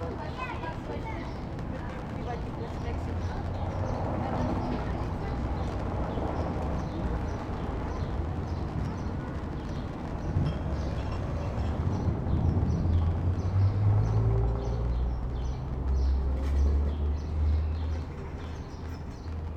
{"title": "berlin, friedelstraße: vor griechischem restaurant - the city, the country & me: in front of a greek restaurant", "date": "2011-06-06 16:21:00", "description": "in front of the greek restaurant \"taverna odysseus\", pedestrians, traffic noise and a upcoming thunderstorm\nthe city, the country & me: june 6, 2011\n99 facets of rain", "latitude": "52.49", "longitude": "13.43", "altitude": "47", "timezone": "Europe/Berlin"}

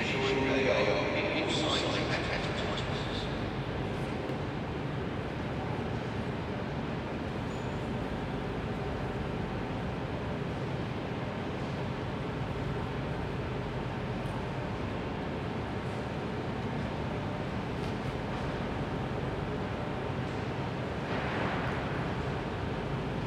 {"title": "London Borough of Camden, Greater London, Vereinigtes Königreich - St Pancras International - EuroStar arrival, main hall ambience", "date": "2013-02-14 11:59:00", "description": "St Pancras International - EuroStar arrival, main hall ambience. A train arrives, brakes squeak, reverb, announcements.\n[Hi-MD-recorder Sony MZ-NH900 with external microphone Beyerdynamic MCE 82]", "latitude": "51.53", "longitude": "-0.13", "altitude": "32", "timezone": "Europe/London"}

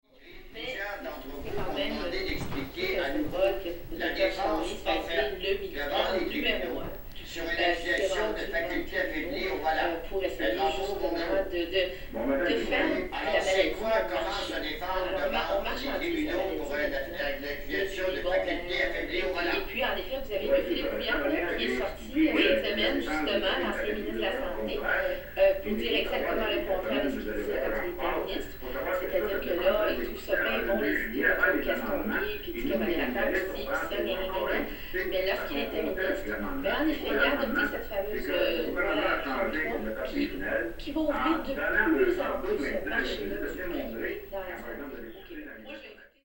{"title": "Montreal: Hotel Anne Ma Soeur Anne - Hotel Anne Ma Soeur Anne", "date": "2008-12-11 09:12:00", "description": "equipment used: M-Audio Microtrack II\nTV phone-in programme and radio broadcast recorded in hotel bedroom", "latitude": "45.52", "longitude": "-73.58", "altitude": "52", "timezone": "America/Montreal"}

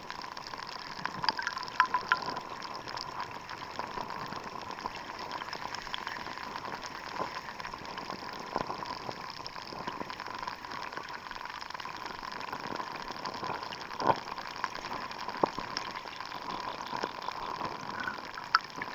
{"title": "Kintai, Lithuania, hydrophone in grassy water", "date": "2022-07-23 11:25:00", "description": "Underwater microphone in grassy seashore", "latitude": "55.42", "longitude": "21.25", "timezone": "Europe/Vilnius"}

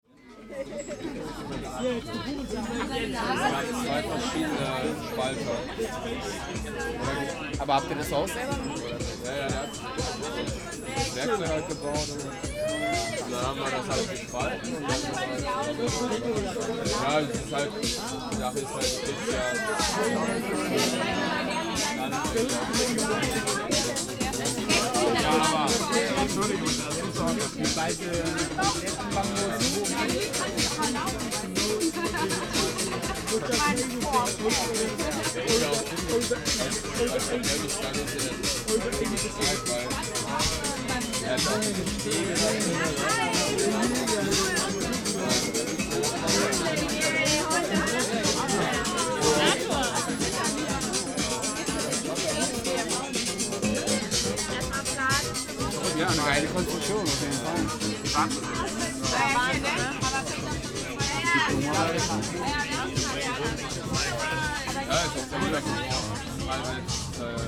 {"title": "köln, hans böckler platz - temporary bamboo house, opening", "date": "2009-09-26 01:25:00", "description": "opening party at a temporary house built of bamboo and fabric. the concept behind seems to be about the reoccupation of public spaces.", "latitude": "50.94", "longitude": "6.93", "altitude": "52", "timezone": "Europe/Berlin"}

